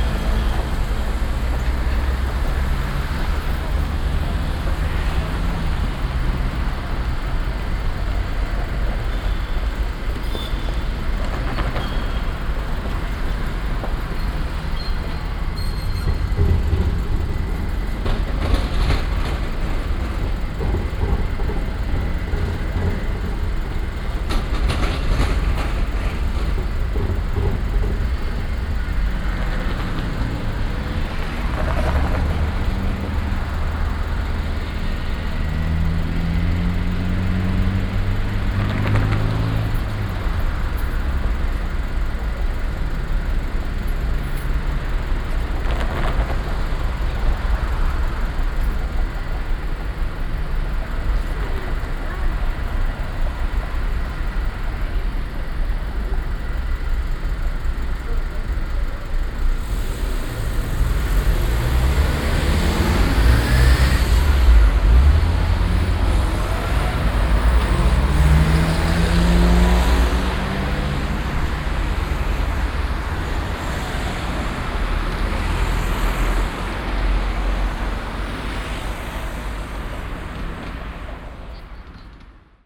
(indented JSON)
{
  "title": "cologne, barbarossaplatz, verkehrszufluss pfälzer strasse - koeln, barbarossaplatz, verkehrszufluss pfälzerstrasse 02",
  "description": "strassen- und bahnverkehr am stärksten befahrenen platz von köln - aufnahme: nachmittags\nsoundmap nrw:",
  "latitude": "50.93",
  "longitude": "6.94",
  "altitude": "55",
  "timezone": "GMT+1"
}